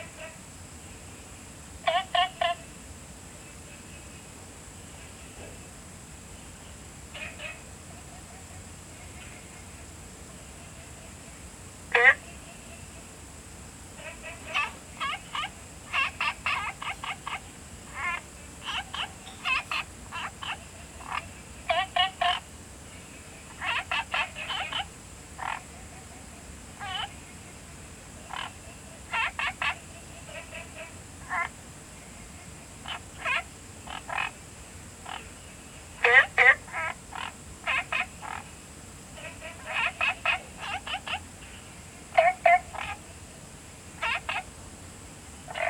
青蛙ㄚ婆ㄟ家, 桃米里, Taiwan - Frogs chirping
Frogs chirping, Small ecological pool
Zoom H2n MS+XY